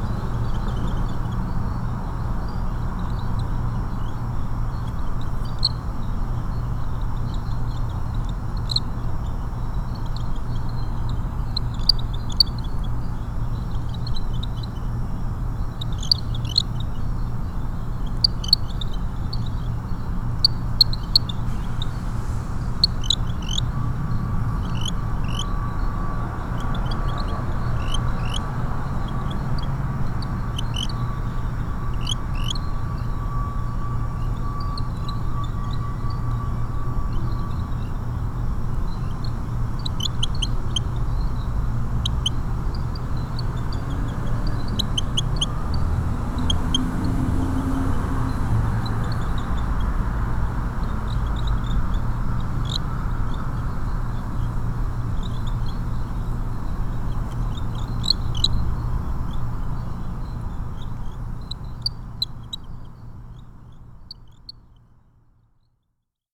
Cockroach chorus, Exxon Mobile building, Downtown Houston, Texas
Cockroaches singing like pretty birds, en masse! Recorded among them, perched in the middle of a jasmine garden - where they were hiding... Urban, insects, cars, traffic, night sounds.
Church Audio CA-14 omnis with binaural headset > Tascam DR100 MK-2
TX, USA, 29 July, ~01:00